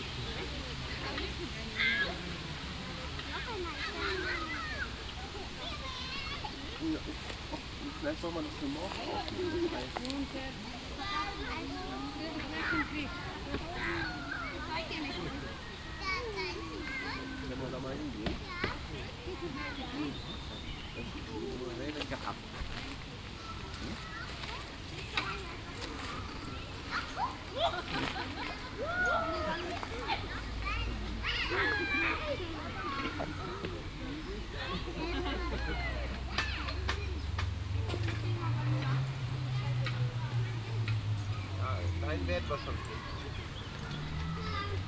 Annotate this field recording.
soundmap nrw: social ambiences/ listen to the people in & outdoor topographic field recordings